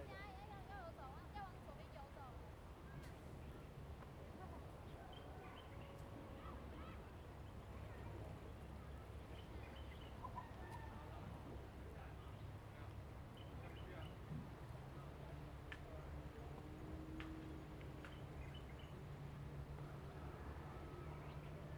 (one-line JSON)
{"title": "龍蝦洞, Hsiao Liouciou Island - On the coast", "date": "2014-11-01 15:48:00", "description": "On the coast, Birds singing, Sound of the wave\nZoom H2n MS+XY", "latitude": "22.34", "longitude": "120.39", "altitude": "6", "timezone": "Asia/Taipei"}